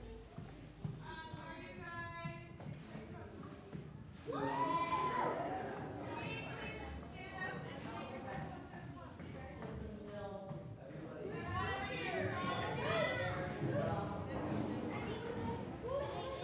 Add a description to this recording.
Listening to the group settle in, Thursday, 9:32am. Kids settling in to Harvey Milk Center summer day camp, San Francisco. Sennheiser MKH-8040/MKH-30 Mid-Side, Sound Devices 702T. WLD2013_049